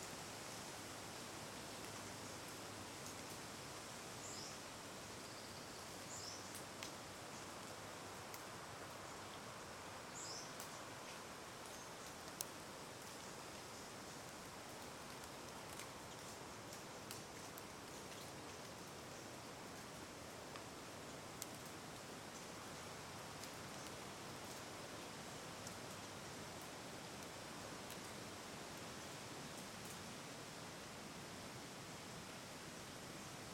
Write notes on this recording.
dripping tree sounds in the quietude of Olema Valley near Point Reyes